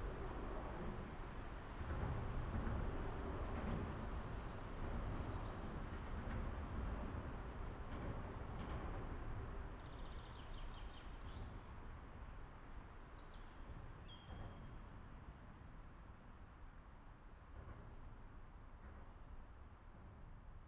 Bonn, Alemania - The Bridge
Recorded under the edge between concrete and metal parts of the brigde.